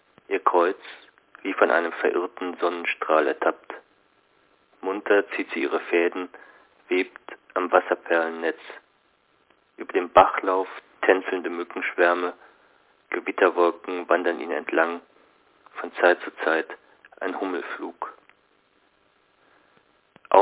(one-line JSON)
{"title": "himmel/worte/land (5) - himmel worte land (5) - hsch ::: 08.05.2007 17:14:37", "latitude": "48.56", "longitude": "-4.46", "altitude": "50", "timezone": "GMT+1"}